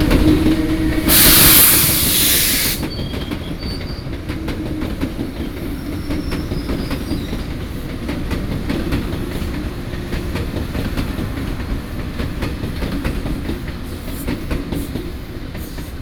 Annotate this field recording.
Railway, Traveling by train, Sony PCM D50+ Soundman OKM II